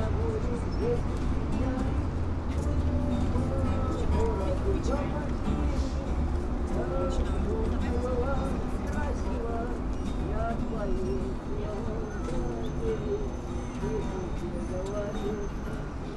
пр. Ленина, Барнаул, Алтайский край, Россия - Barnaul, ЦУМ, два гитариста
Two street musicians blend into cacophony, ambient street noise.
Altayskiy kray, Russia, 26 July 2018